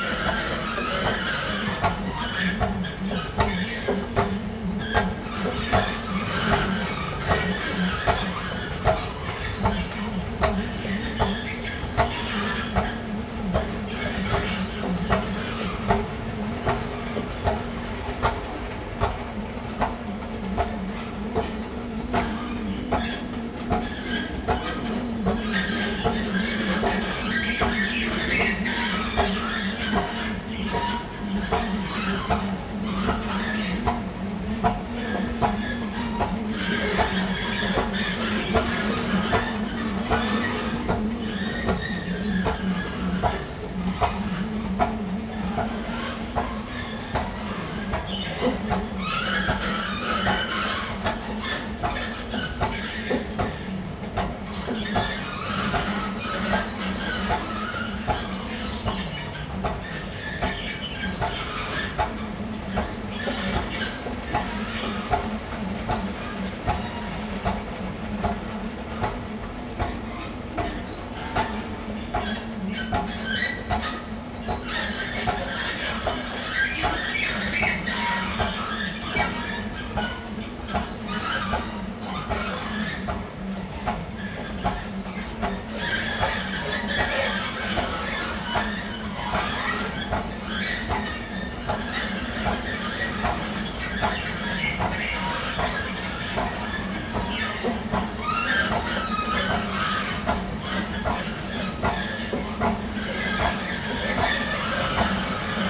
{"title": "Přístaviště, Ústí nad Labem-město-Ústí nad Labem-centrum, Czechia - Singing escalators at the corridor unterganag", "date": "2018-04-09 19:21:00", "description": "moaning rubber bands at the escalators", "latitude": "50.66", "longitude": "14.04", "altitude": "144", "timezone": "Europe/Prague"}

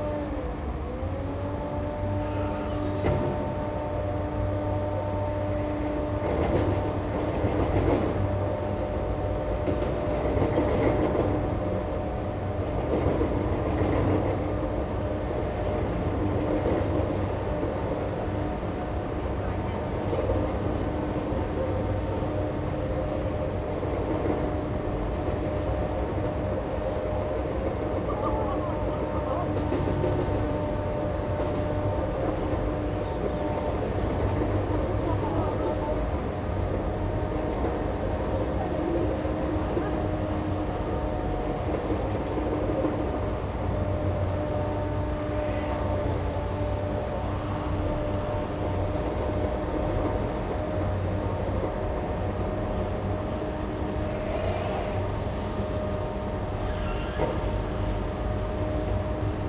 {"title": "Endless building site, U Alexanderplatz, Berlin, Germany - Alexanderplatz, an endless building site", "date": "2021-09-09 16:24:00", "description": "Half of Alexanderplatz is currently a building site that effects its soundscape greatly. The sound of drilling, earth moving and other heavy machines is ever present at levels that mask people and generally obscures the sonic atmosphere. It is no longer possible to hear the deep bass from the UBahn underground. Surrounding roads and walkways have been partially blocked and traffic flows re-routed. Yellow trams no longer rumble across the open plaza (a key sound) as the tracks are being replaced. Berlin has constant building work that shifts from location to location. In time this one will be completed, only for the next to start.", "latitude": "52.52", "longitude": "13.41", "altitude": "41", "timezone": "Europe/Berlin"}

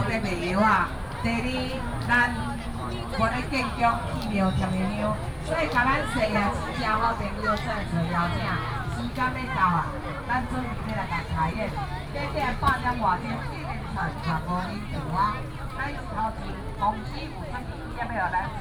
{"title": "楊明夜市, Yangmei Dist. - night market", "date": "2017-08-11 19:38:00", "description": "night market, vendors peddling", "latitude": "24.91", "longitude": "121.16", "altitude": "173", "timezone": "Asia/Taipei"}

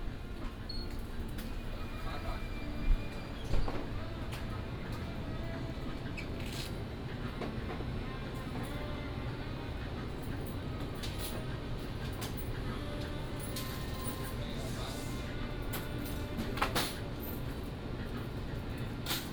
{"title": "Ln., Sec., Xinyi Rd., Da’an Dist., Taipei City - Walking in the rain", "date": "2015-07-23 14:17:00", "description": "Walking in the rain, walk into the convenience store", "latitude": "25.03", "longitude": "121.54", "altitude": "20", "timezone": "Asia/Taipei"}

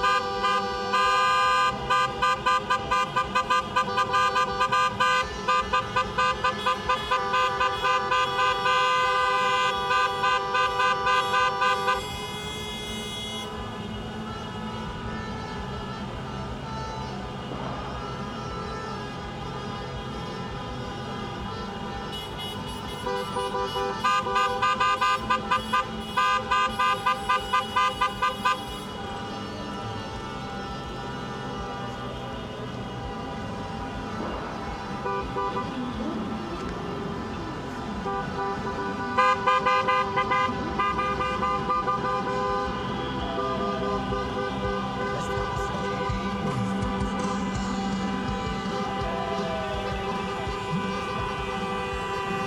{"title": "Bd Baudouin, Bruxelles, Belgique - European demonstration of Taxi drivers against Uber", "date": "2022-09-08 12:00:00", "description": "Horns, klaxons.\nTech Note : Sony PCM-M10 internal microphones.", "latitude": "50.86", "longitude": "4.36", "altitude": "24", "timezone": "Europe/Brussels"}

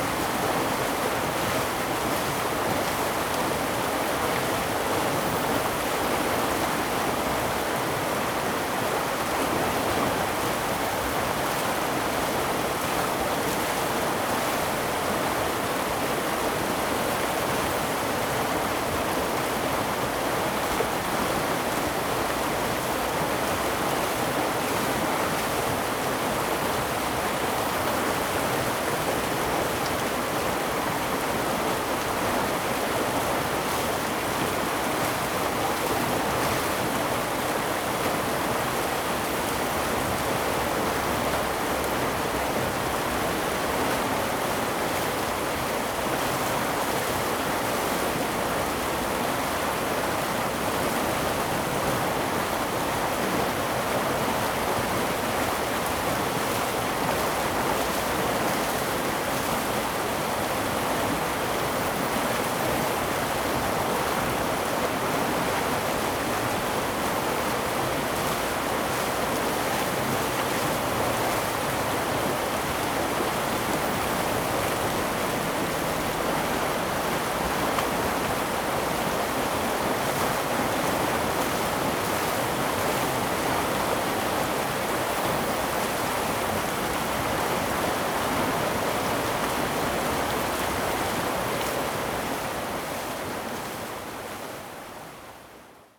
{"title": "Fuxing Rd., 吉安鄉福興村 - Waterwheel", "date": "2014-08-28 08:03:00", "description": "Waterwheel, Very Hot weather\nZoom H2n MS+XY", "latitude": "23.97", "longitude": "121.56", "altitude": "47", "timezone": "Asia/Taipei"}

